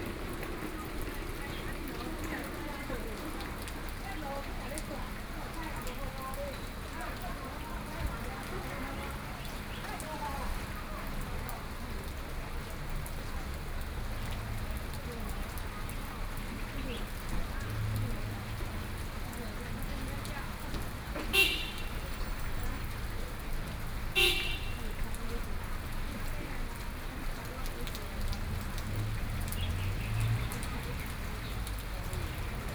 Toucheng, Yilan County - Square in front of the station
Square in front of the station, Rainy Day, Selling ice cream sounds, The traffic sounds, Binaural recordings, Zoom H4n+ Soundman OKM II